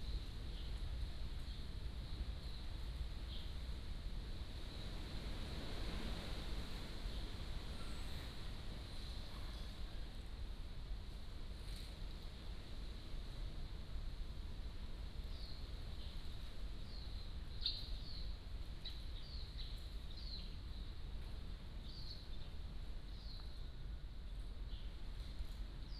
東安宮, Magong City - In the temple
In the temple, Birds singing, Wind